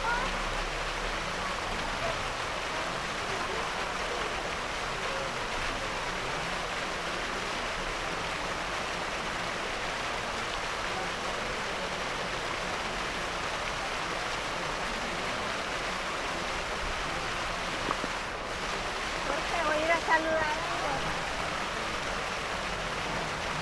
Burjasot, Valencia, España - Plaza/fuente/niños
Plaza/fuente/niños